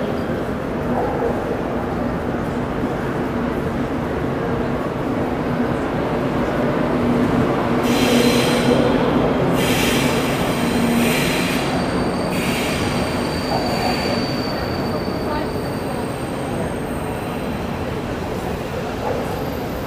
berlin main station, hall
recorded nov 16th, 2008.
Berlin, Germany